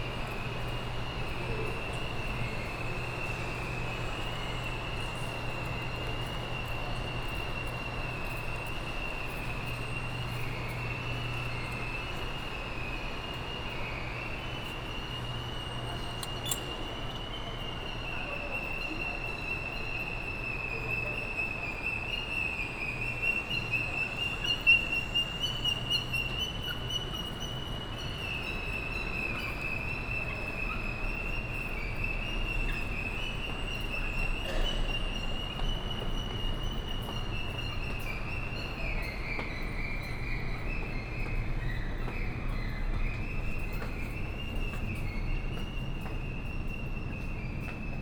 基隆火車站, Ren'ai District, Keelung City - Walking at the station
Walking at the station, From the station hall, Directions to the station platform, Escalator sound
2 August 2016, 18:54